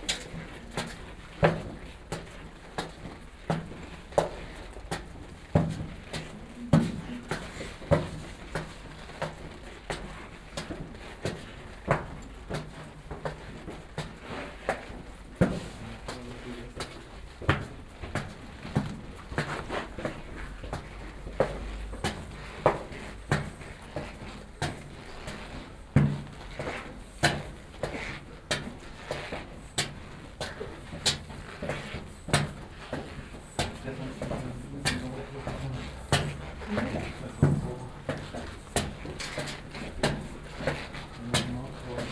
berlin, zionskirche, narrow stairway up the tower, squeaking leather jacket
Zionskirchstr., Berlin, Germany, 20 March 2011